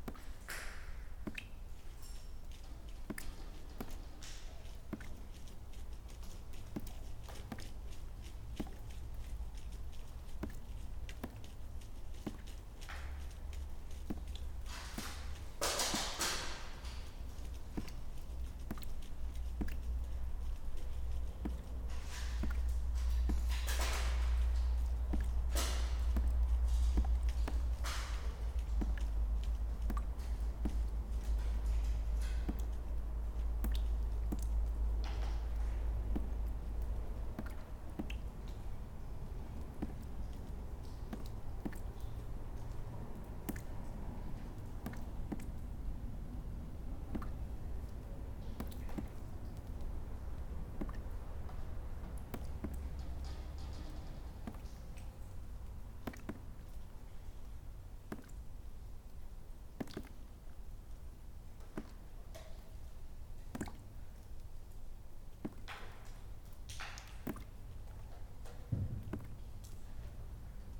Brussels, Belgium - Walking on broken glass while water drips through cracked ceilings
This abandoned factory is full of broken glass which pops when you walk on it, and cracks in the ceiling through which rain leaks in noisy droplets. This is the sound of pops and drops. Recorded with EDIROL R-09.
België - Belgique - Belgien, European Union, 21 June 2013, 12:31